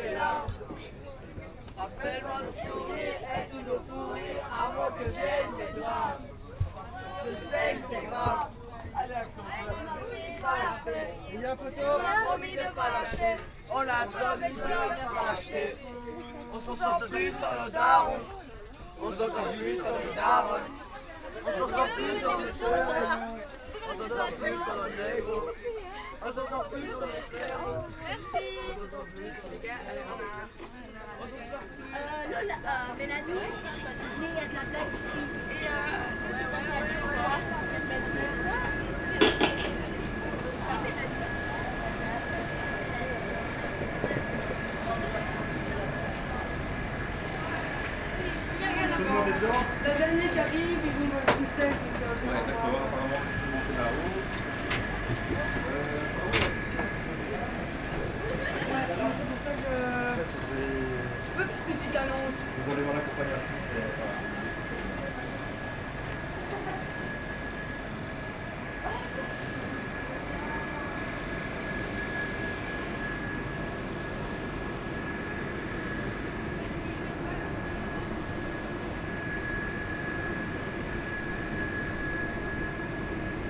Mons, Belgium - K8strax race - Leaving the Mons station
After an exhausting race, our scouts are leaving us and going back home, sometimes very far (the most is 3 hours by train, the normal path is 1h30). Here, the 1250 scouts from Ottignies and near, are leaving using the train we ordered for us. Everybody is joking, playing with water in the wc, and activating the alarm system. Hüüh ! Stop boys ! At the end, train is leaving. At 10:47 mn, we can here the desperate station master saying : They are gone !!!